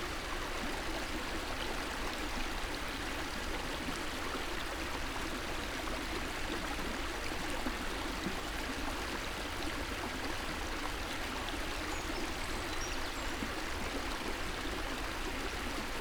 Klein Wall, Grünheide - river Löcknitz flow
river Löcknitz flow
(SD702, MKH8020 AB)
Grünheide (Mark), Germany, 30 April 2016